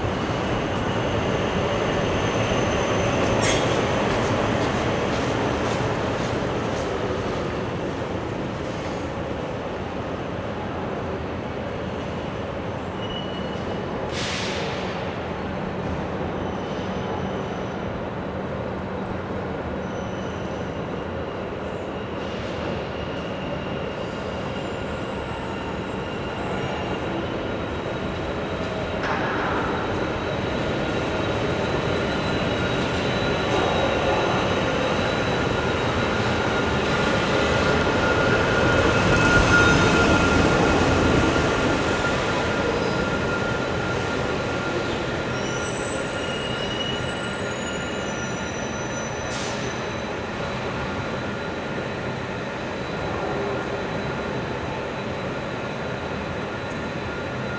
Bus Tunnel - Bus Tunnel #1
Running underneath downtown Seattle is a commuter bus tunnel, allowing Metro to bypass downtown traffic. The 1.3 mile $455 million tunnel is finished entirely in expensive Italian marble, thanks to a cozy arrangement between the contractors and city managers. It presents a reverberant sound portrait of mass transit at work.
Major elements:
* Electric busses coming and going (some switching to diesel on the way out)
* Commuters transferring on and off and between busses
* Elevator (with bell) to street level
* Loose manhole cover that everybody seems to step on